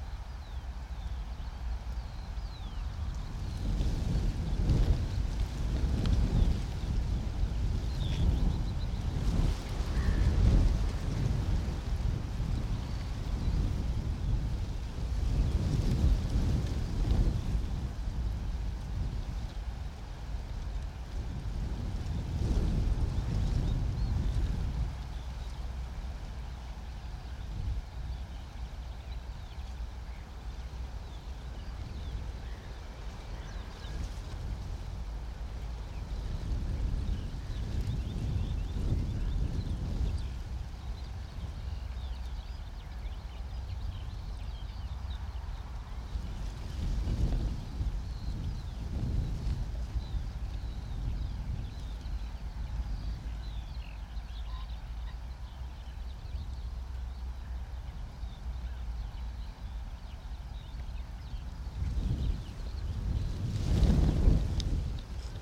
{"title": "Streaming from a hedgerow in large intensively farmed fields near Halesworth, UK - Wind blowing through the bramble hedge, skylark background", "date": "2021-05-27 10:51:00", "description": "Winds blow easily across these large unprotected fields, eroding the soil as they go. They gust through the low bramble hedges shivering leaves and prickly stems around the hidden microphones, sometimes briefly touching and knocking into them. When recording in person I would have been holding the mics rather than placing them out of sight inside the hedge. Streaming, where the equipment is left in place for 2 or 3 days, results in this kind of close, more physical relationship with the vegetation (birds and animals come much closer too, sometimes finding and examining the mics). It gives a very different sound perspective, a point of ear that would be impossible in the recordist's presence.", "latitude": "52.35", "longitude": "1.49", "altitude": "23", "timezone": "Europe/London"}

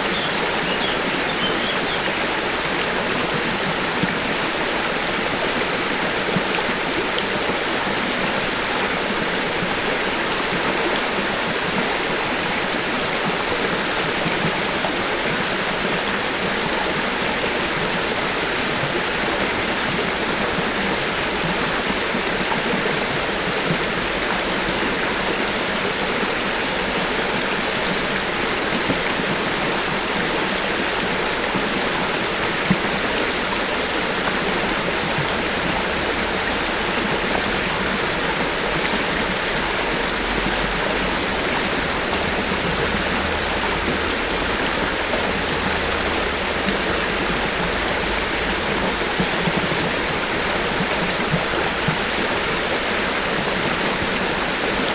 {"title": "Afon Glaslyn - River passing over rocks with birdsong", "date": "2021-06-24 14:47:00", "description": "The river Afon Glaslyn as it feeds off lake Lyn Dinas. Recorded on a clear day with little wind", "latitude": "53.02", "longitude": "-4.07", "altitude": "61", "timezone": "Europe/London"}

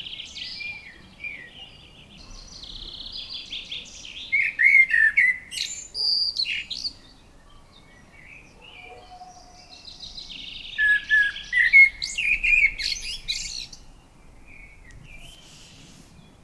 {"title": "Mont-Saint-Guibert, Belgique - Blackbird", "date": "2016-05-26 20:40:00", "description": "In the cemetery of Mont-Saint-Guibert, a blackbird is giving a beautiful concert. Trains are omnipresent near everywhere in this small city.", "latitude": "50.64", "longitude": "4.61", "altitude": "81", "timezone": "Europe/Brussels"}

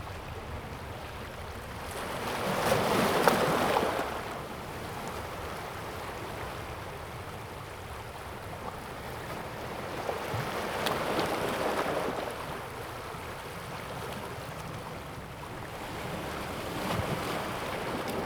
{"title": "溪口, Tamsui District, New Taipei City - sound of the waves", "date": "2016-11-21 16:11:00", "description": "On the coast, Sound of the waves, Aircraft sound\nZoom H2n MS+XY", "latitude": "25.24", "longitude": "121.45", "timezone": "Asia/Taipei"}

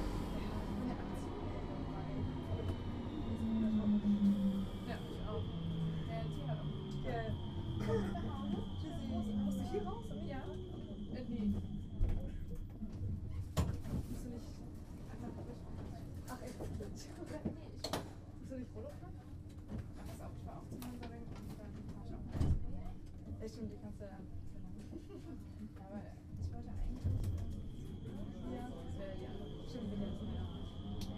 {"title": "Cologne - Tram", "date": "2009-08-14 20:20:00", "description": "Silent travelling with the tram in Cologne.", "latitude": "50.95", "longitude": "6.95", "altitude": "58", "timezone": "Europe/Berlin"}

